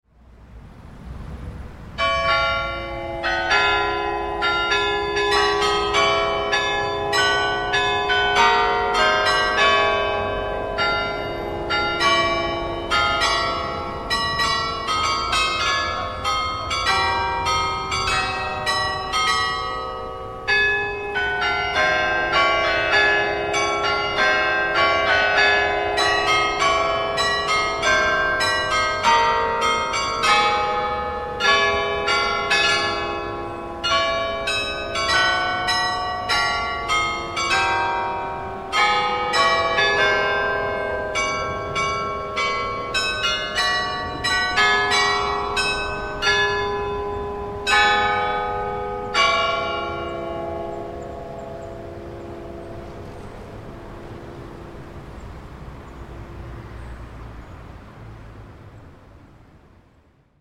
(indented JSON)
{
  "title": "Verviers Carillon - Carillon, Notre Dame des Recollets",
  "date": "2008-11-13 00:57:00",
  "description": "Carillon of Notre Dame des Recollets in Verviers. \"Dieu protège la libre Belgique, et son roi.\" Front channels from double MS recording with Behringer B2 Pro (fig-8) and two SD condenser cardiods, EMU 1616m.",
  "latitude": "50.59",
  "longitude": "5.86",
  "altitude": "169",
  "timezone": "Europe/Berlin"
}